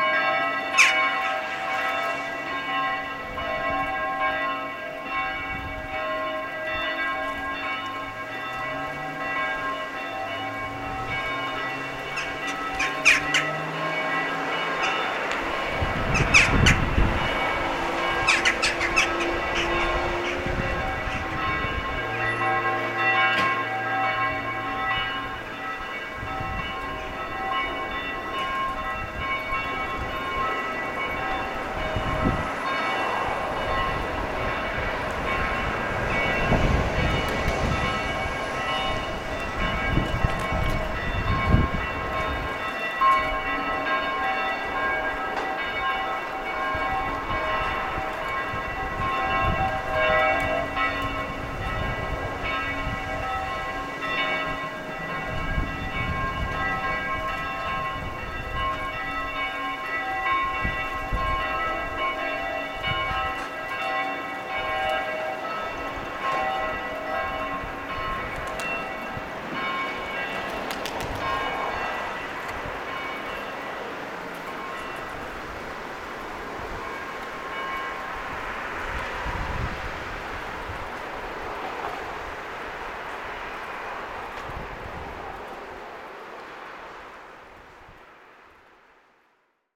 Mistrzejowice Bus Depot, Kraków, Poland - (881) Bells
Recording of bells from near church caught accidentally while passing through bus depot.
Recorded with Olympus LS-P4
6 January 2022, 12:00